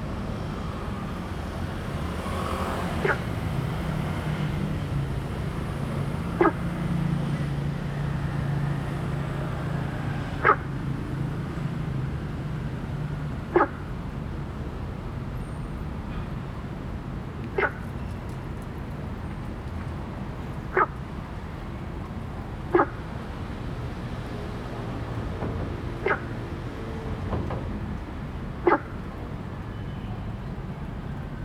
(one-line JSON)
{"title": "大學公園, Taipei City - Traffic and Frog sound", "date": "2015-07-02 20:10:00", "description": "Traffic Sound, Frog sound, in the park\nZoom H2n MS+XY", "latitude": "25.02", "longitude": "121.53", "altitude": "16", "timezone": "Asia/Taipei"}